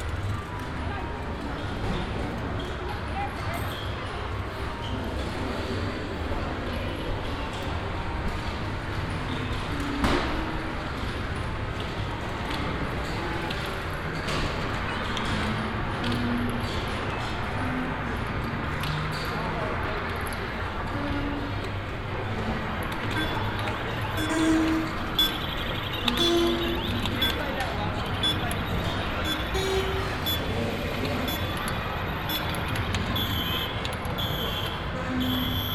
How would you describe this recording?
Inside a tent construction with several different coin game machines. The sound of game machines - fun for money. international city scapes - topographic field recordings and social ambiences